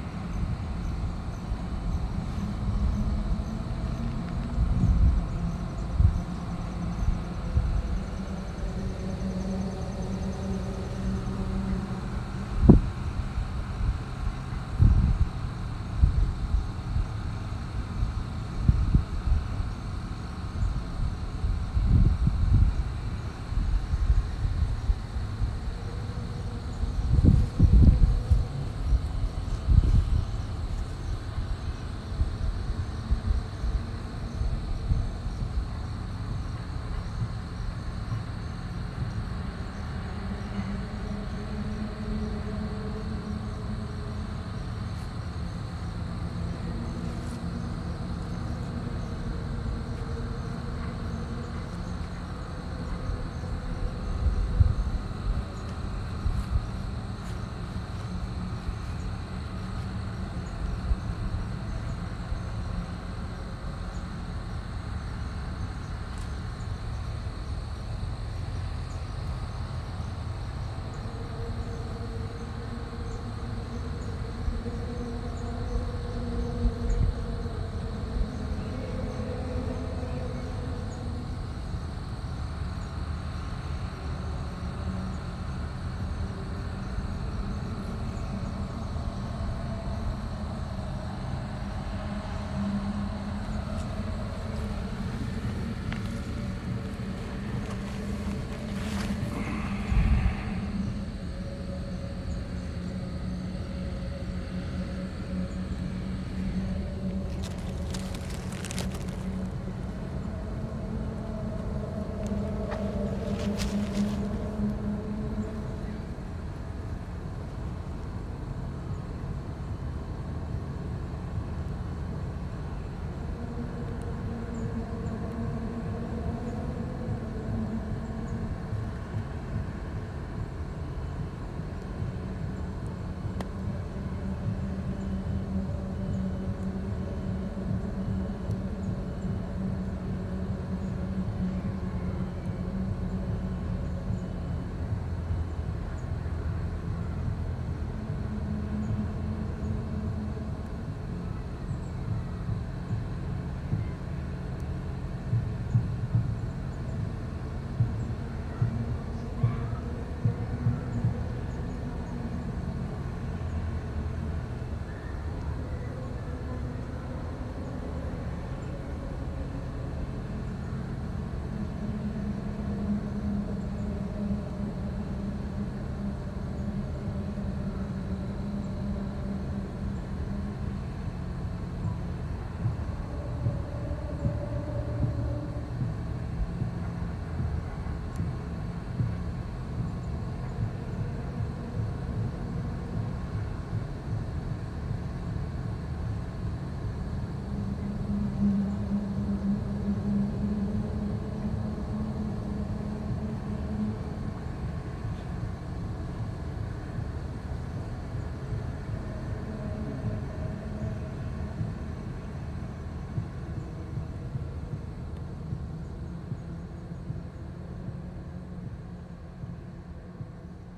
This recording was performed on the traditional lands of the Anishinaabe and Haudenosaunee people.
Recorded on iPhone.
I sat on the grass on Lake Ontario and laid my phone there too. I heard the sound of crickets, the sound of waves. I think there was some sort of foghorn or siren in the distance, probably from the other side of the lake. I walk along this park quite a bit, but I usually tune out with earphones as I walk. There are many people who run or bike along the path as well. It was refreshing to take the earphones out and hear what I have been surrounded by.